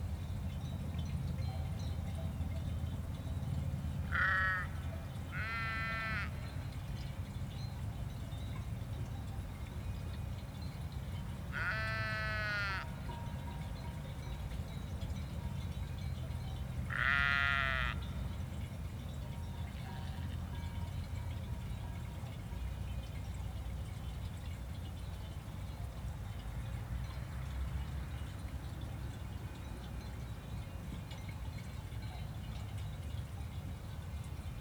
Grub, Schweiz - Hartmannsrueti - Hillside, cows and sheep in the distance
[Hi-MD-recorder Sony MZ-NH900, Beyerdynamic MCE 82]
Grub, Switzerland, August 2015